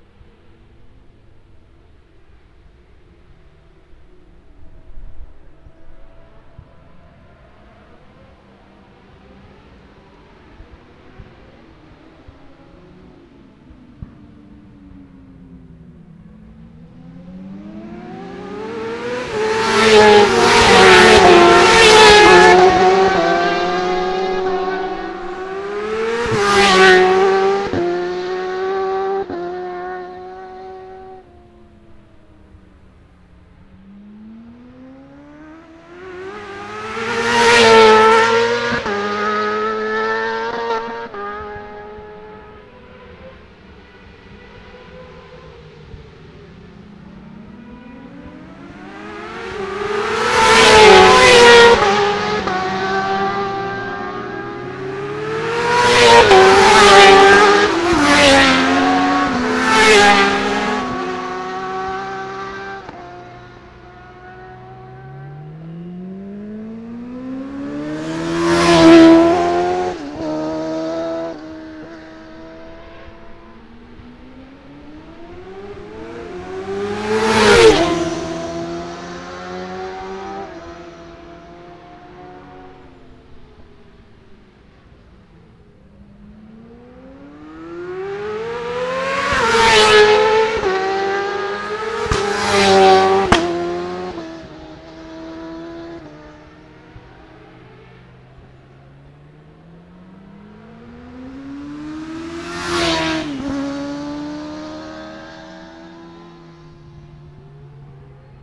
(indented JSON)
{
  "title": "Scarborough, UK - motorcycle road racing 2012 ...",
  "date": "2012-04-15 09:12:00",
  "description": "600cc practice ... Ian Watson Spring Cup ... Olivers Mount ... Scarborough ... binaural dummy head ... comes out the wrong way round and a bit loud ... grey breezy day ...",
  "latitude": "54.27",
  "longitude": "-0.41",
  "altitude": "147",
  "timezone": "Europe/London"
}